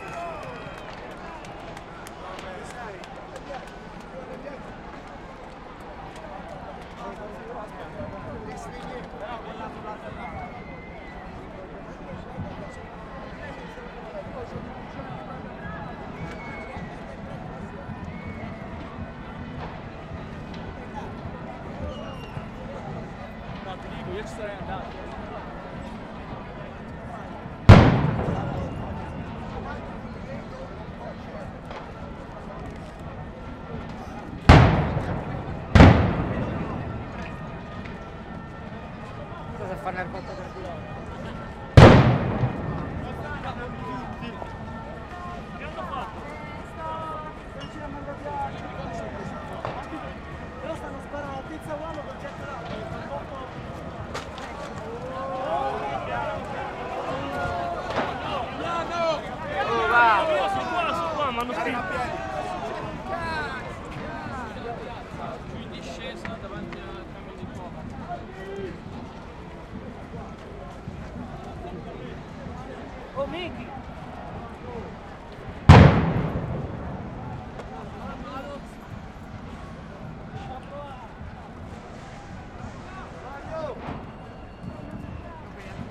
Piazza S.Giovanni
People are screaming against police throwing tear gases